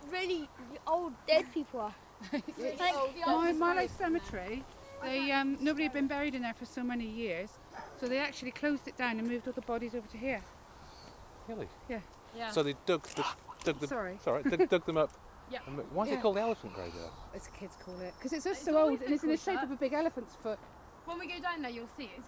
{"title": "Efford Walk Two: Elephants graveyard - Elephants graveyard", "date": "2010-09-24 16:32:00", "latitude": "50.39", "longitude": "-4.10", "altitude": "85", "timezone": "Europe/London"}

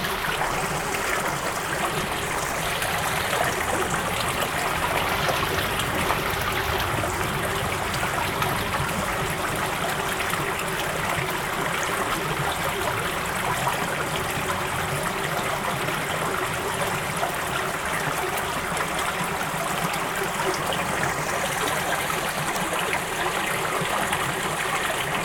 {"title": "moitzfeld, haus hardt. small stream", "date": "2010-02-14 17:55:00", "description": "a small stream in a small valley by a forrest in the wintertime\nsoundmap nrw - topographic field recordings and social ambiences", "latitude": "50.98", "longitude": "7.17", "altitude": "180", "timezone": "Europe/Berlin"}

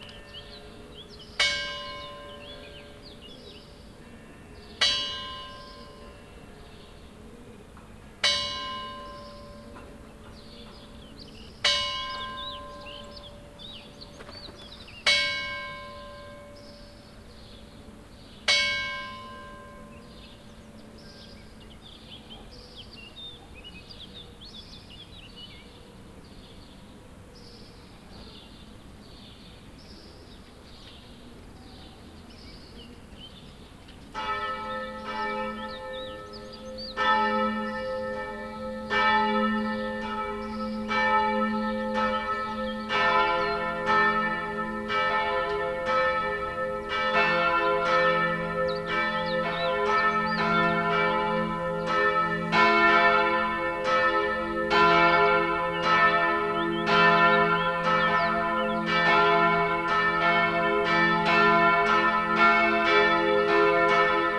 {"title": "Litoměřice, Czech Republic - Noon bells", "date": "2009-04-19 12:00:00", "description": "Noon bells at Domske namesti in Litomerice. A spring soundscape in the centre of a small historical town.\njiri lindovsky", "latitude": "50.53", "longitude": "14.13", "altitude": "173", "timezone": "Europe/Prague"}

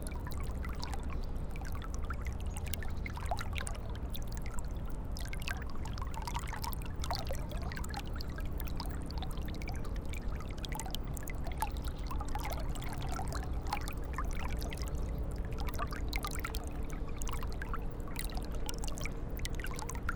{"title": "Saint-Pierre-lès-Elbeuf, France - Eure confluence", "date": "2016-09-19 15:00:00", "description": "The Eure river confluence, going into the Seine river. It's a quiet place, contrary to Elbeuf city.", "latitude": "49.29", "longitude": "1.04", "altitude": "4", "timezone": "Europe/Paris"}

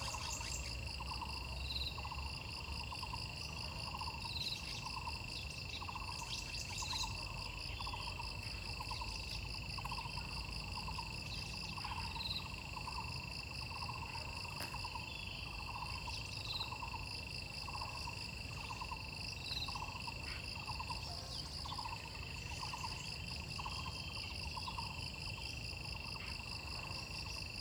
{"title": "中路坑桃米里, Puli Township - Sound of insects and birds", "date": "2016-05-06 07:08:00", "description": "Birds called, Sound of insects\nZoom H2n MS+XY", "latitude": "23.95", "longitude": "120.92", "altitude": "590", "timezone": "Asia/Taipei"}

